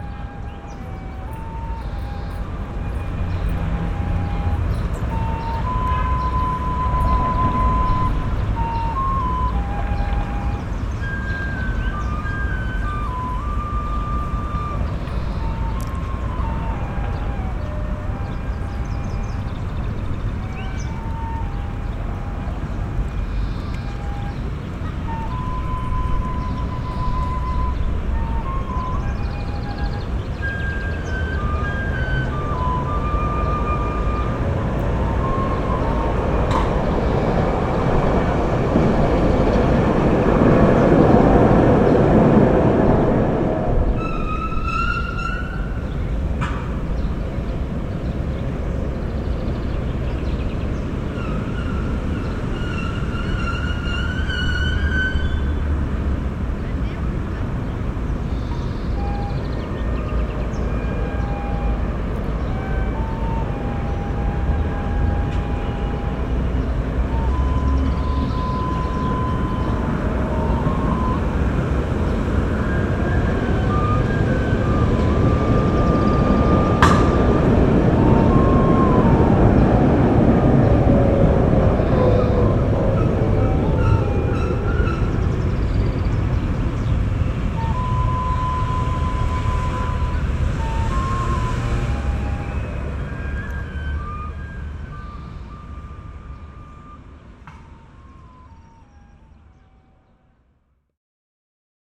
park with street musician
walk along the flautist in the park near botanical garden